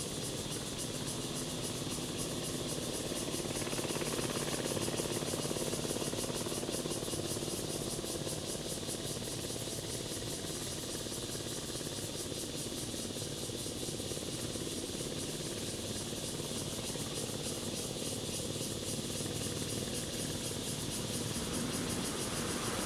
秀林鄉銅門村, Hualien County - Rest area
Construction Noise, Cicadas sound, Traffic Sound, The weather is very hot
Zoom H2n MS+ XY
Hualien County, Sioulin Township, 榕樹